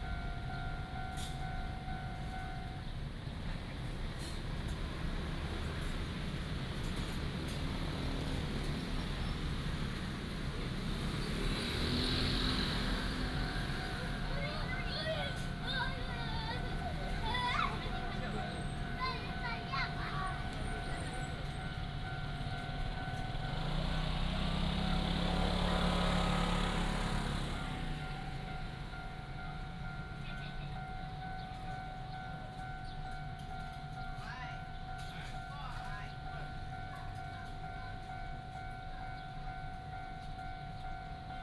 Ln., Qingnian Rd., East Dist., Tainan City - In the railway level road
In the railway level road, Traffic sound, Train traveling through
East District, Tainan City, Taiwan, 31 January